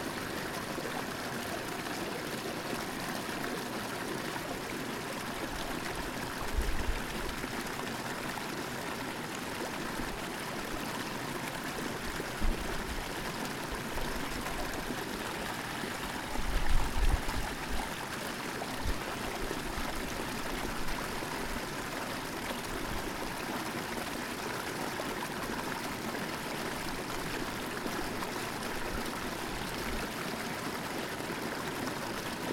Pikes Peak Greenway Trail, Colorado Springs, CO, USA - Monument Creek Rapids
Water moving over rocks and submerged PVC pipe in a small waterfall in Monument Creek